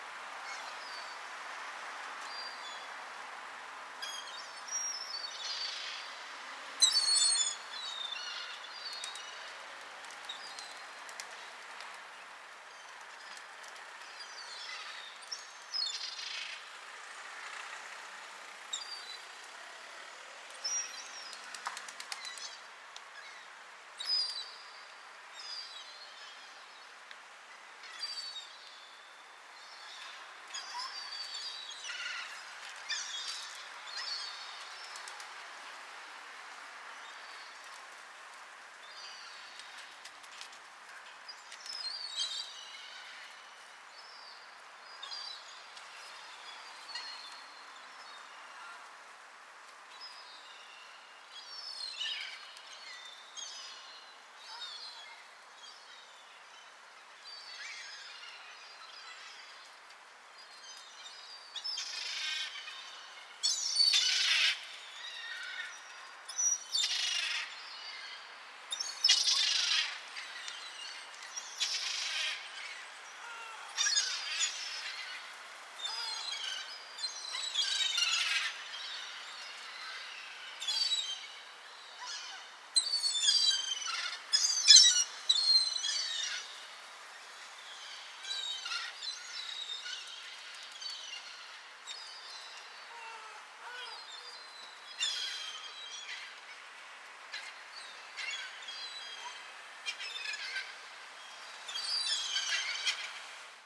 Olivais Sul, Lisboa, Portugal - Urban Seaguls - Urban Seaguls
Seaguls in urban enviroment. Recorded with AB omni primo 172 capsules and a SD mixpre6.
25 December